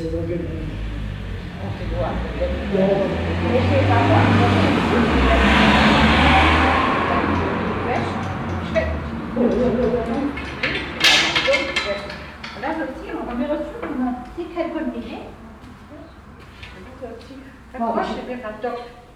{
  "title": "Huldange, Luxemburg - Huldange, cemetery, citizen evening talk",
  "date": "2012-08-04 19:15:00",
  "description": "Am Friedhof des Ortes. Eine Gruppe von Ortsansässigen unterhält sich während der Grabpflege. Der Klang des lokalen Dialektes, Schritte und Werkzeuge auf dem Kiesweg, Vögelstimmen und vorbeifahrene Fahrzeuge.\nAt the town's cemetery. A group of local citizen talking while taking care on their plots. The sound of the local dialect, steps and tools on the gravel ground, birds and passing by traffic.",
  "latitude": "50.16",
  "longitude": "6.01",
  "altitude": "522",
  "timezone": "Europe/Luxembourg"
}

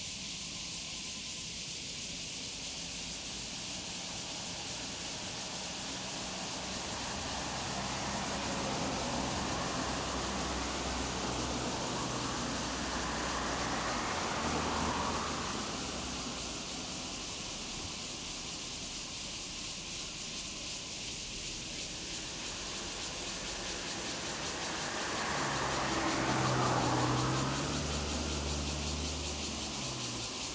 {
  "title": "Changbin Township, Taiwan - Cicadas sound",
  "date": "2014-10-09 07:38:00",
  "description": "Cicadas sound, Frogs sound, Birds singing, Traffic Sound\nZoom H2n MS+XY",
  "latitude": "23.26",
  "longitude": "121.38",
  "altitude": "290",
  "timezone": "Asia/Taipei"
}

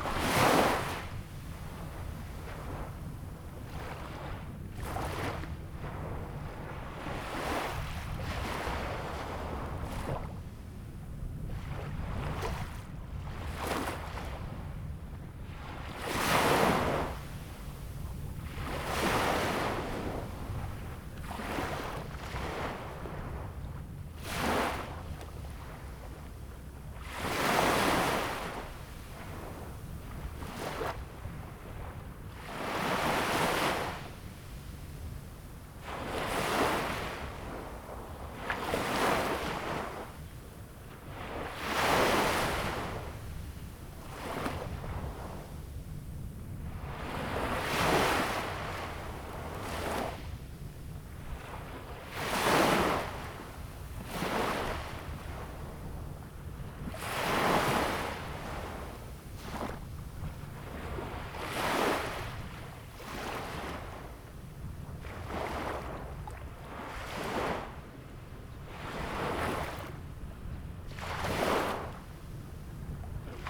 {
  "title": "茄萣海岸公園, Qieding Dist., Kaohsiung City - Artificial bay",
  "date": "2018-05-07 16:59:00",
  "description": "Artificial bay, Sound of the waves\nZoom H2n MS+XY",
  "latitude": "22.90",
  "longitude": "120.18",
  "altitude": "1",
  "timezone": "Asia/Taipei"
}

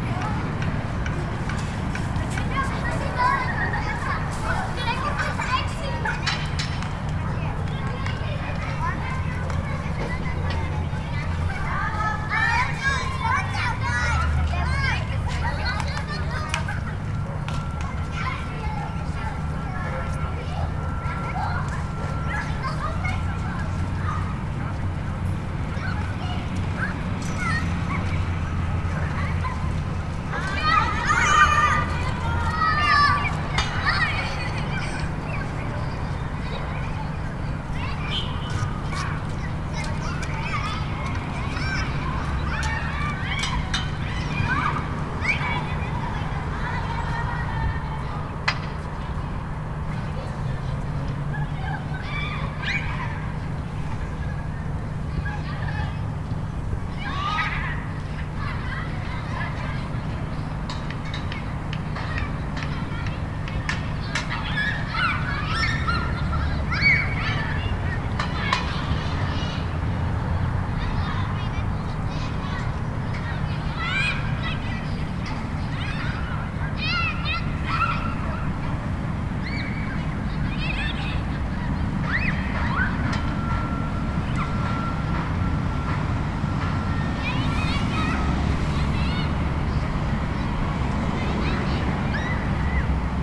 {
  "title": "University Hill, Boulder, CO, USA - Playground",
  "date": "2013-02-14 04:47:00",
  "description": "Sitting at the playground after school, as a line of Hispanic children walk by and disappear",
  "latitude": "40.00",
  "longitude": "-105.27",
  "altitude": "1663",
  "timezone": "America/Denver"
}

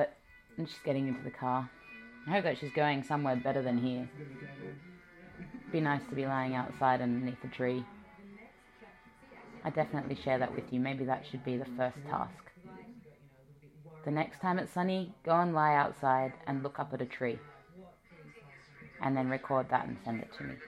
{
  "title": "navarino grove",
  "description": "playing the lion and alice",
  "latitude": "51.55",
  "longitude": "-0.06",
  "altitude": "16",
  "timezone": "Europe/Berlin"
}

